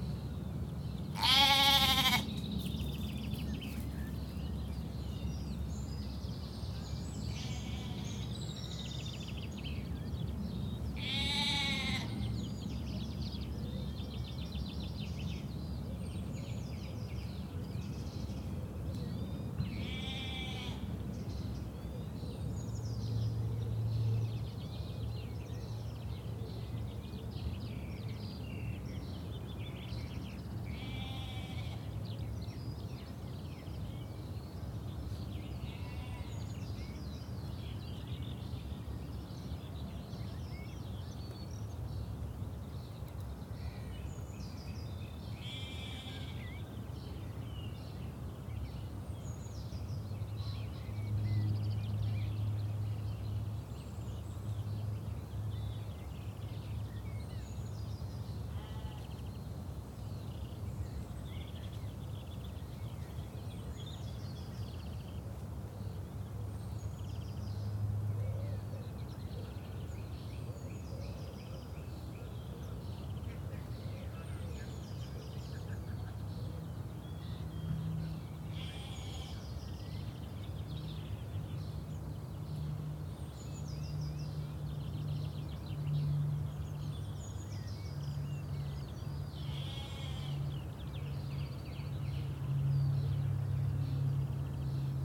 This is the beautiful sound of a field of sheep, first thing in the morning. There are ewes and lambs together, and many birds in the woodland area beside them. At 9am, it's beautifully peaceful here and you can hear the skylarks who live on this organic farm in harmony with their sheep buddies.
Reading, UK, 1 May 2017, 08:43